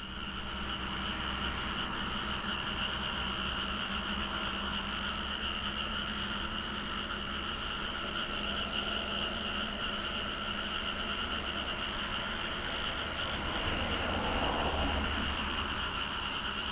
南迴公路, Xinxianglan, Taimali Township - early morning
Beside the road, Frog croak, Traffic sound, early morning, Chicken roar, birds sound
Binaural recordings, Sony PCM D100+ Soundman OKM II
Taimali Township, Taitung County, Taiwan, April 2018